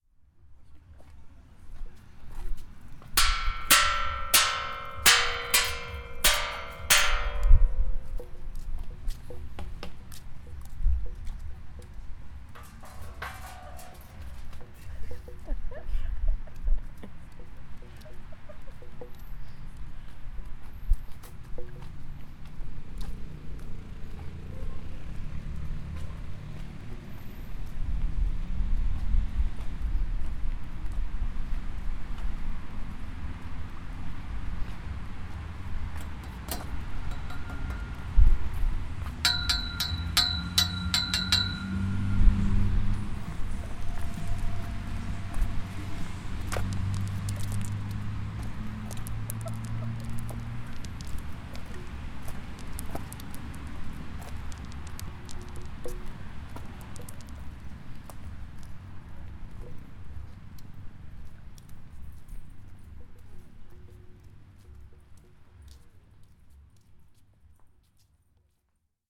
Nea Paralia (Garden of Sound), Thessaloniki, Greece - Playing with sound sculptures during a sounwalk with friends
The Garden of Sound has a lot of sound sculptures, we had a great time with them during a soundwalk for World Listening Day 2012.
Salonika, Greece, 19 July 2012